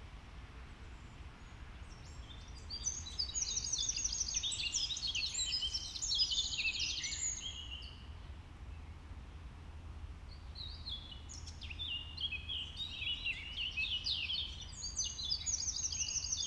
berlin, marienhöher weg - park, morning ambience
solstice, after sunrise, morning ambience